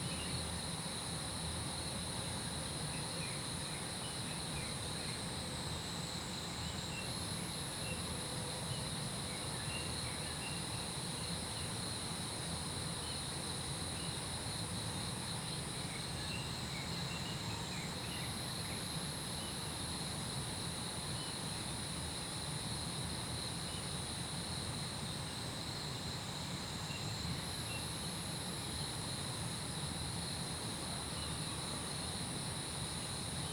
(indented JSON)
{
  "title": "水上巷, 南投桃米里, Taiwan - Early morning",
  "date": "2016-06-08 05:06:00",
  "description": "Early morning, Bird sounds, Insect sounds\nZoom H2n MS+XY",
  "latitude": "23.94",
  "longitude": "120.92",
  "altitude": "476",
  "timezone": "Asia/Taipei"
}